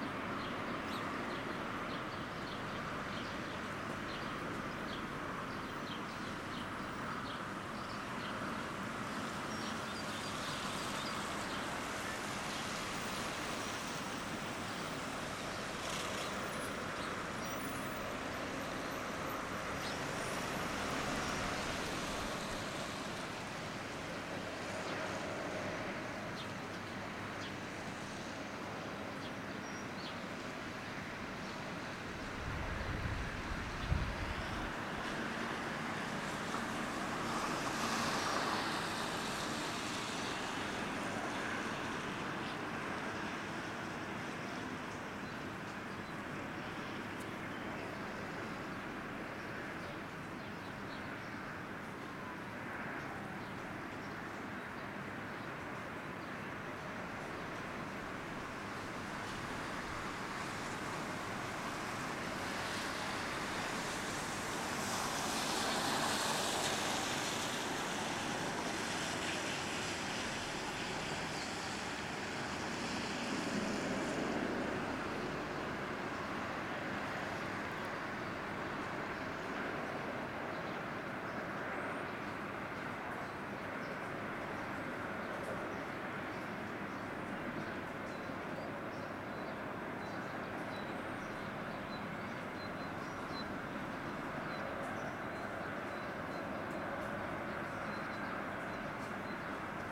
Bezalel/Buber, Jerusalem - Mount Scopus, Jerusalem
Mount Scopus, Jerusalem
Highway, birds, breeze.
1 April 2019, 11:15